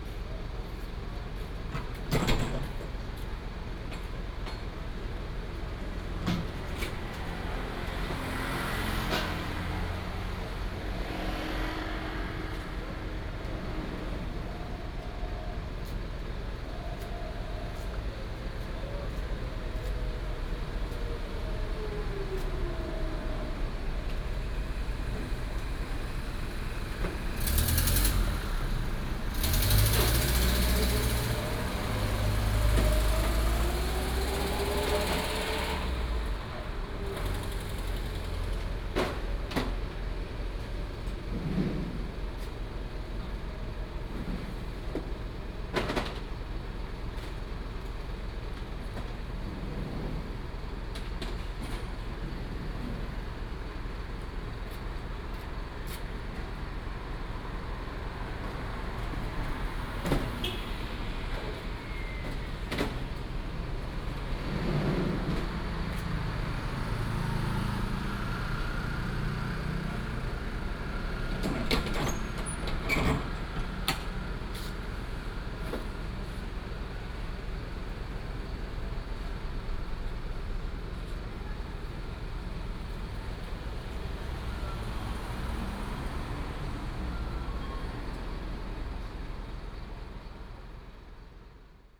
中山路102號, Donggang Township - Late night street
Night outside the convenience store, Late night street, Traffic sound, Seafood Restaurant Vendor, Truck unloading
Binaural recordings, Sony PCM D100+ Soundman OKM II
Donggang Township, Pingtung County, Taiwan, 28 March 2018